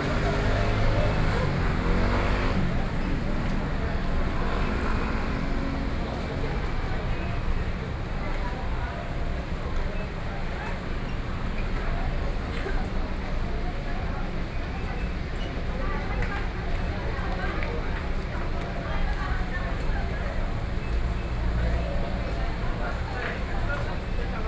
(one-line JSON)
{"title": "Taoyuan Station, Taiwan - Soundwalk", "date": "2013-08-12 11:50:00", "description": "After getting off from the platform go through the underpass railway station, Sony PCM D50 + Soundman OKM II", "latitude": "24.99", "longitude": "121.31", "altitude": "102", "timezone": "Asia/Taipei"}